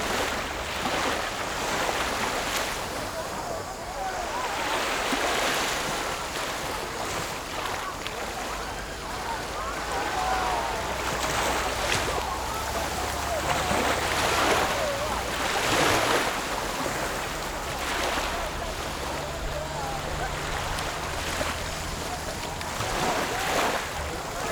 Shimen, New Taipei City - Waves
桃園縣 (Taoyuan County), 中華民國, 25 June 2012